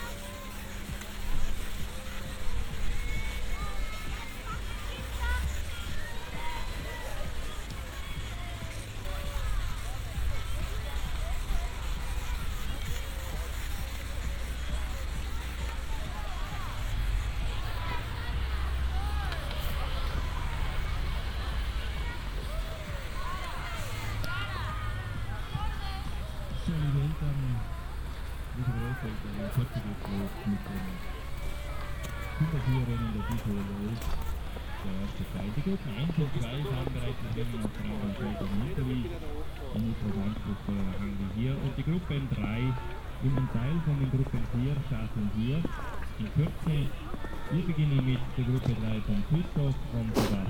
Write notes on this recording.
A big area for childs in different ages to learn ski. The sound of several moving carpet elevators to get uphill while standing - The church bell in the distance - later the sound of music and announcements in austrian language of a ski race of an youngster ski group. Unfortunately some wind disturbances. international sound scapes - topographic field recordings and social ambiences